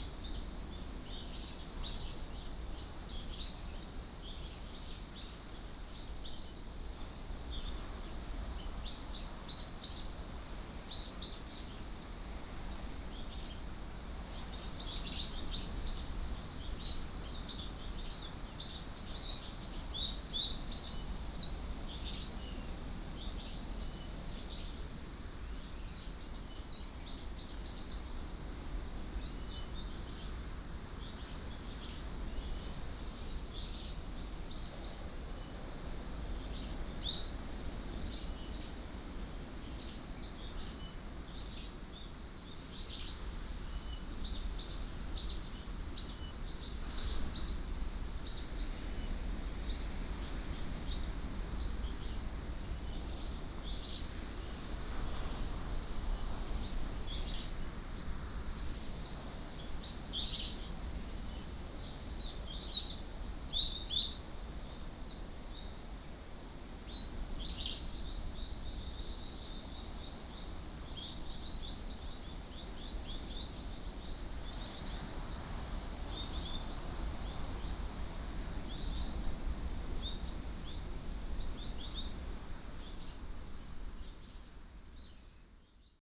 東清村, Koto island - Birds singing
Birds singing, sound of the waves
29 October, 14:53